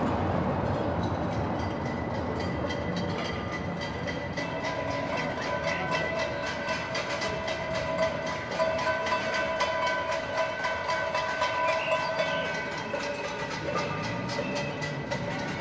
Reuterstrasse: Balcony Recordings of Public Actions - Noise Protest Day 08
Instead of the large protest around renting policies/evictions etc. that was planned for today, the protest moved online plus it went acoustic through a call to make noise on the balconies and at the windows, as people are staying home.
While recording this from my balcony again, I had the window of the other room open where a live streamed concert of Bernadette LaHengst was playing. Her singing and the birds and the church bells at 6 pm were initially louder than the little banging that starts, but towards the end, more people join with banging and rattles.
Sony PCM D100
28 March 2020, ~18:00